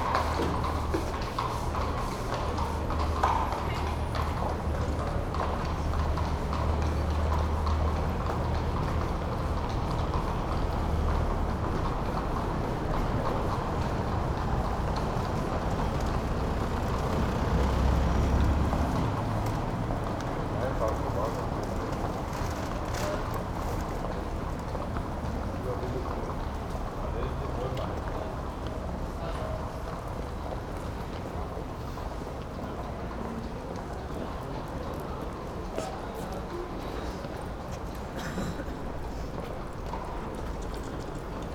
Esslingen am Neckar, Deutschland - Am Postmichelbrunnen
Policemen on horseback pass by
Sony PCM-D50
Esslingen, Germany, 10 January 2014